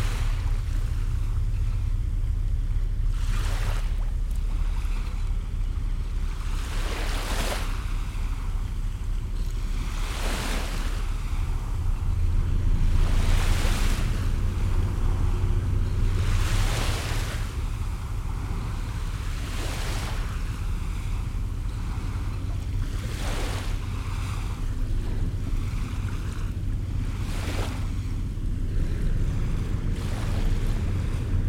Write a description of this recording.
Recorded using a pair of DPA 4060s and Earthling Designs custom preamps into an H6 Handy Recorder